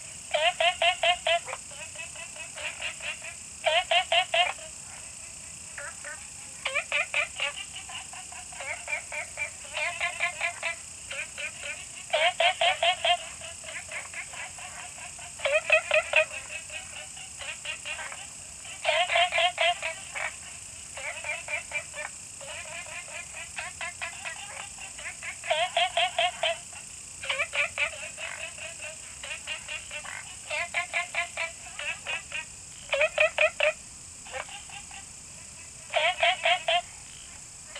青蛙阿婆家, Taomi Ln., Puli Township - Frog and Insect
In the bush, Frog calls, Insect sounds
Zoom H2n MS+XY
Nantou County, Puli Township, 桃米巷11-3號, 3 September 2015, 20:40